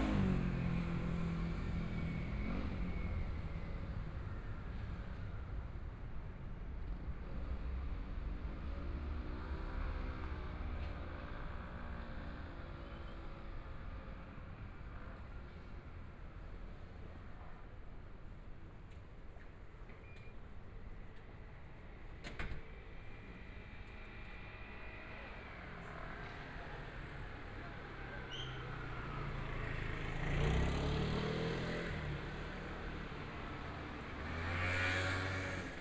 walking on the Road, Environmental sounds, Motorcycle sound, Traffic Sound, Binaural recordings, Zoom H4n+ Soundman OKM II
Taipei City, Taiwan, 6 February, ~18:00